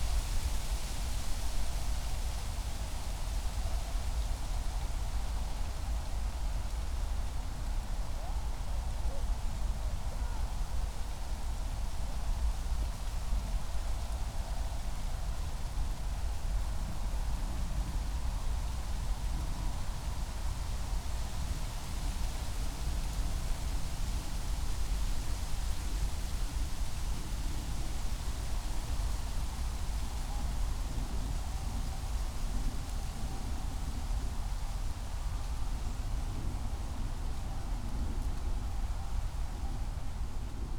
Tempelhofer Feld, Berlin, Deutschland - wind in poplar trees
place revisited
(Sony PCM D50, DPA4060)
Berlin, Germany, 25 October, 12:00